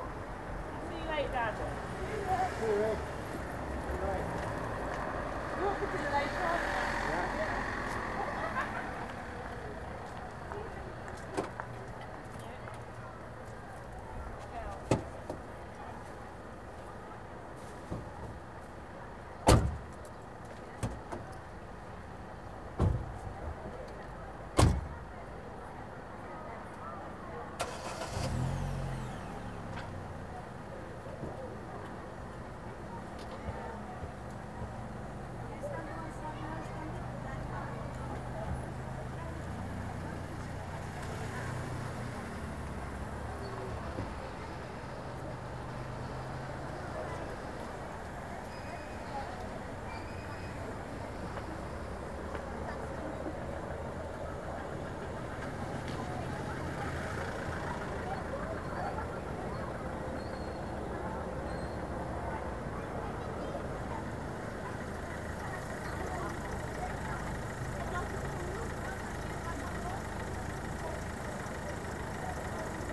Ashby-de-la-Zouch, Leicestershire, UK - Car Park Ambience
Hand held Zoom H4n recorded in public car park with school party passing through.
Very minimal editing to remove a couple of clicks, normalised to -3Db.